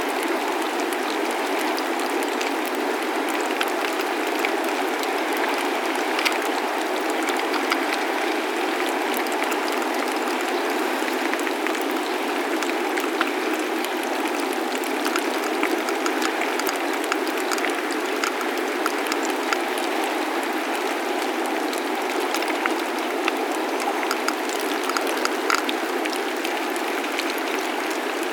Greencastle Pier Rd, Kilkeel, Newry, UK - Kelp & Arctic Terns
Recorded with a stereo pair of DPA 4060s and a Sound Devices MixPre with the tide coming in over a bed of kelp.